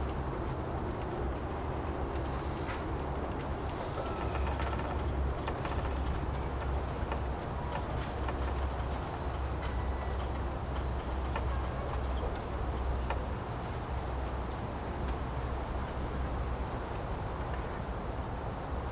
Sec., Mingde Rd., Tucheng Dist., New Taipei City - In the bamboo forest
In the bamboo forest, Traffic Sound
Zoom H4n +Rode NT4